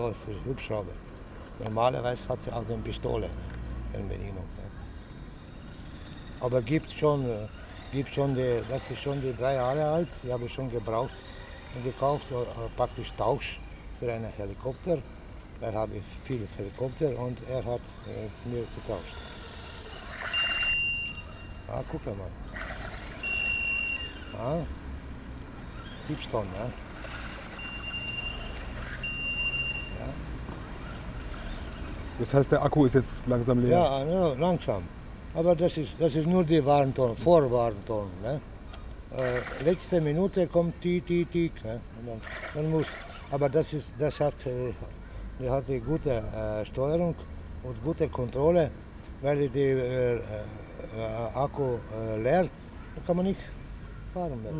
the pilot explains some details about the control cars